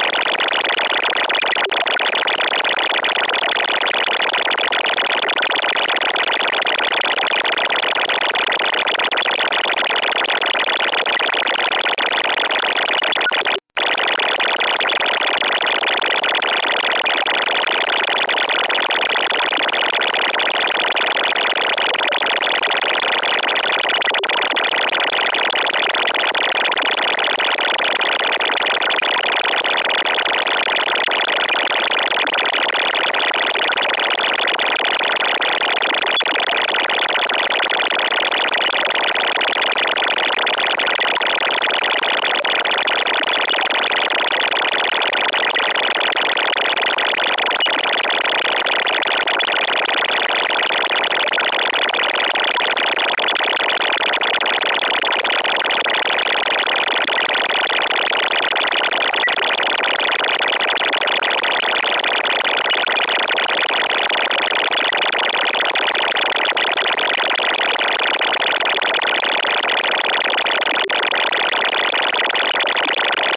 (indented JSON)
{
  "title": "Maintenon, France - Automated teller machine",
  "date": "2017-12-28 09:00:00",
  "description": "Electromagnetic field song of a Automated teller machine, recorded with a telephone pickup coil.",
  "latitude": "48.59",
  "longitude": "1.58",
  "altitude": "101",
  "timezone": "Europe/Paris"
}